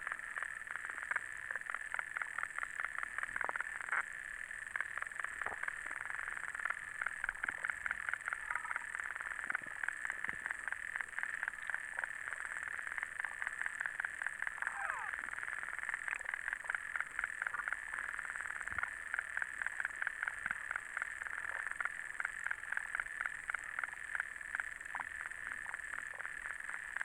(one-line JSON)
{"title": "Utena, Lithuania, lake underwater", "date": "2018-07-22 18:30:00", "description": "underwater listening in city's lake", "latitude": "55.51", "longitude": "25.60", "altitude": "105", "timezone": "Europe/Vilnius"}